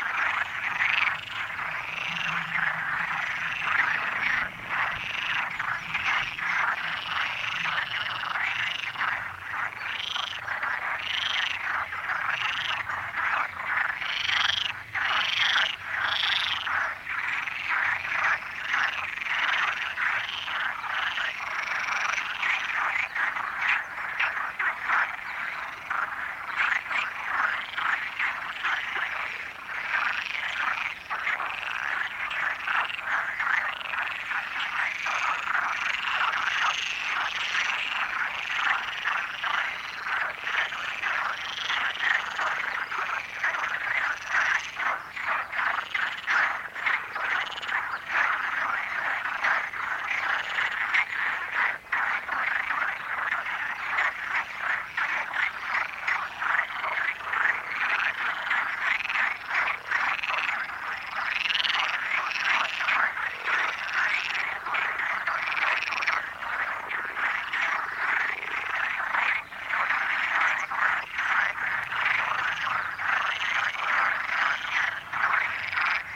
Portugal - Frogs at the lake of the Mistérios Negros Trailhead, Biscoitos
Frogs chatting in the lake at the start of the Mistérios Negros walk near Biscoitos.
Recorded on an H2n XY mics.